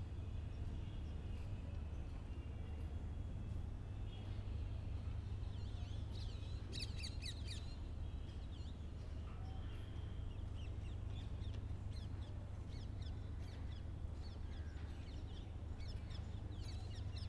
Khirki, New Delhi, Delhi, India - General ambience around the old mosque 3
General city ambiance recorded from the flat roof of the very interesting old mosque in Delhi.